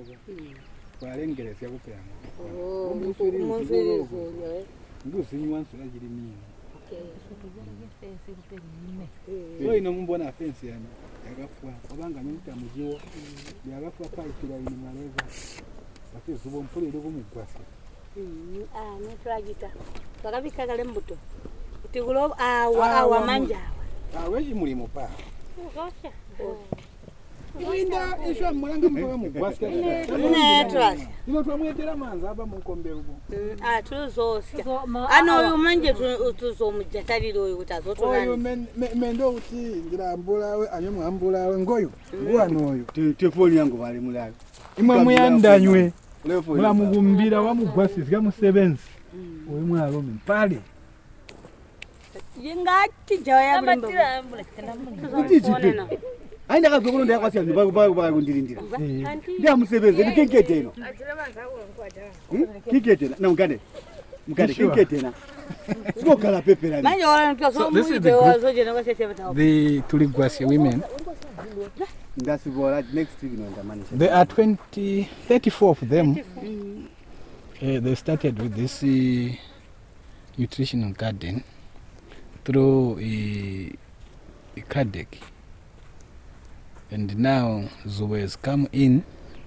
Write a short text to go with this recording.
Mugande, Zubo's project officer describes where we are and introduces us to the Tuligwasye Women Group who are working at this site maintaining a garden during the rainy season. Zubo Trust recently assisted the women in the construction of a pond for fish farming. Zubo Trust is a women’s organization bringing women together for self-empowerment.